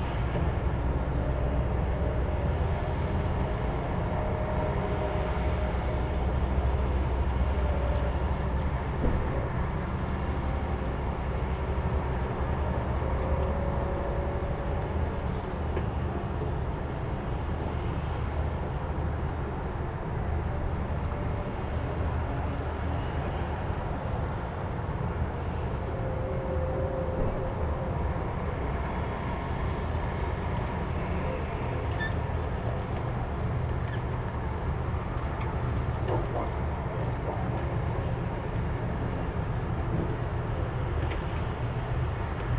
Poznan, balcony - noon ambience
first sunny, spring day. ambience around the apartment buildings. kids playing, small planes flying by, increased bird activity. a construction site emerged close to the housing estate. new buildings are being build. you can her the excavators and big trucks working. (roland r-07)
Poznan, Poland, 23 March 2019, ~13:00